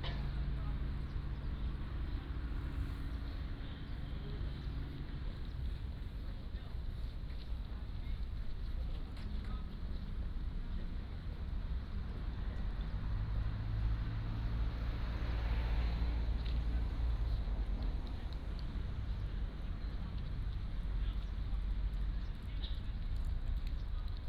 Nangan Township, Taiwan - In the playground
In the playground, Many soldiers are doing sports